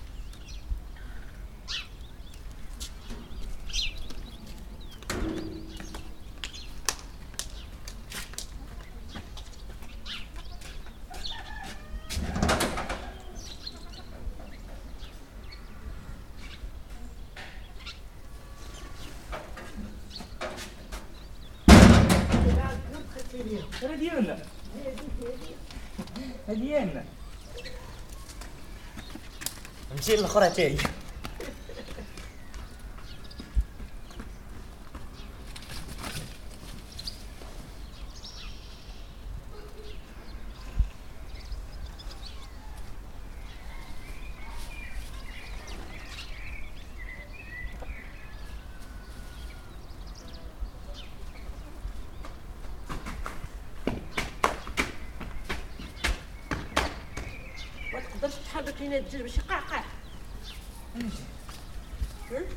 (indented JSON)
{
  "title": "Laâssilat, Maroc - poules au milieu de cactus morts",
  "date": "2021-02-28 15:30:00",
  "description": "Des poules se nourrissent au milieu d'une haie de cactus morts et d'un dépotoir.\nSon pris par Kaïs et Mina.\nAl dajjaj kay yaklo fil wost al drag mayit o lzbel.",
  "latitude": "33.35",
  "longitude": "-7.73",
  "altitude": "186",
  "timezone": "Africa/Casablanca"
}